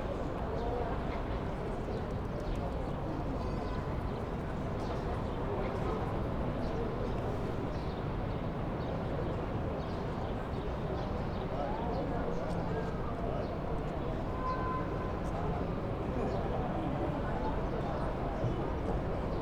plaza de reina sofia, madrid
plaza al frente del museo de reina sofia, madrid